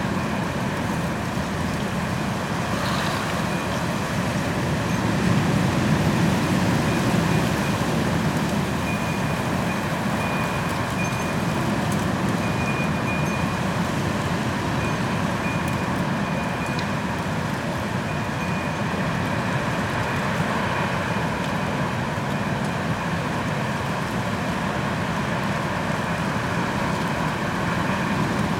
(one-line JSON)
{"title": "Pod Borinou, Nitra, Slovensko - December wind", "date": "2020-12-07 21:45:00", "description": "Nitra, Slovakia, (6.12.2020, 22:30)\nRecorded with AT4022s and MixPre6", "latitude": "48.30", "longitude": "18.09", "altitude": "174", "timezone": "Europe/Bratislava"}